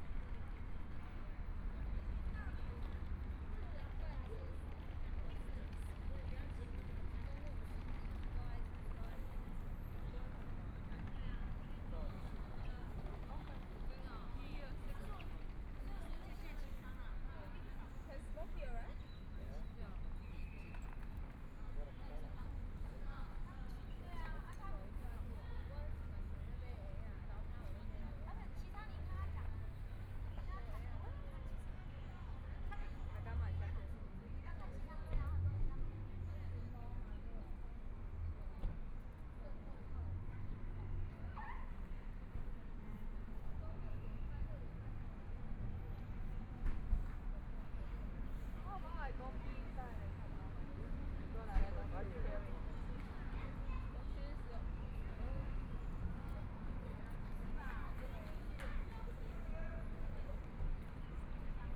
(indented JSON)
{
  "title": "Taibao City, Chiayi County - The square outside the station",
  "date": "2014-02-01 17:48:00",
  "description": "The square outside the station, Traffic Sound, Binaural recordings, Zoom H4n+ Soundman OKM II",
  "latitude": "23.46",
  "longitude": "120.32",
  "timezone": "Asia/Taipei"
}